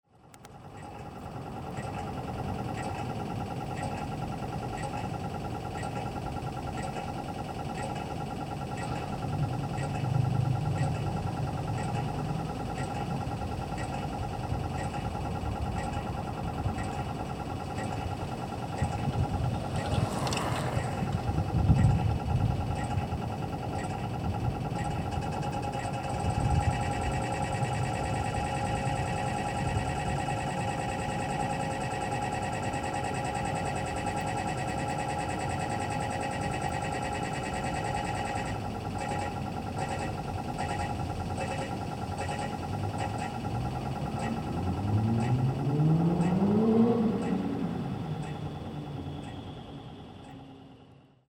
Inferno Crossing Ticks for World Listening Day 2011